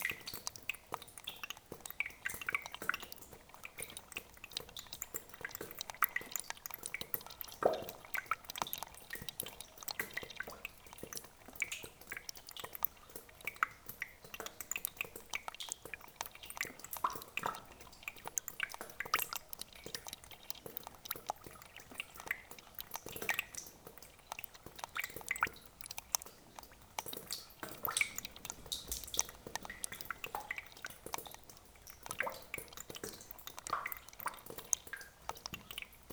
Montagnole, France - Small stream
A very small stream is flowing into the underground cement mine.